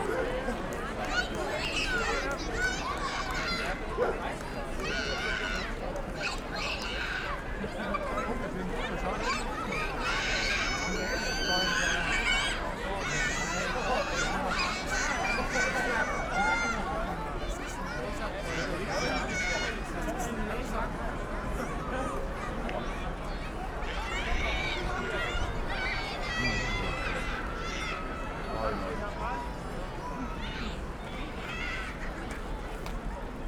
Engelbecken, Kreuzberg/Mitte, Berlin, Deutschland - 25y of German Unity celebration
location of the former Berlin wall, 25y of German Unity celebration. People are applauding when some of the 7000 illuminated ballons, along the former wall line, lifting off.
(Sony PCM D50, DPA4060)